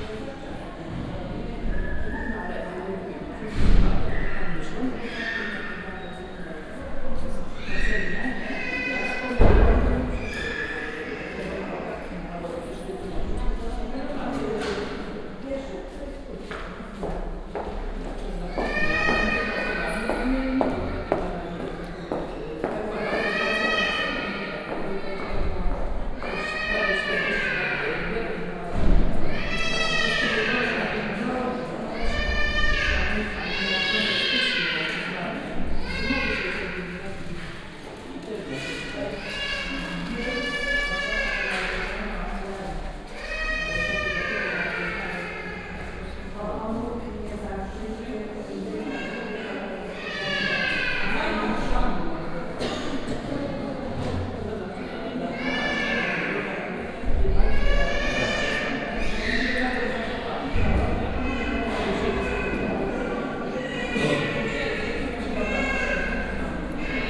(binaural recording) recorded inside a clinic. patients talking with each other, phone ringing at the reception. crying children as there is a separate department for treating their diseases. doctors leaving their offices, slamming and locking the doors. it's a big empty space with a few benches, thus the specious reverberation. (roland r-07 + luhd PM-01 bins)
wielkopolskie, Polska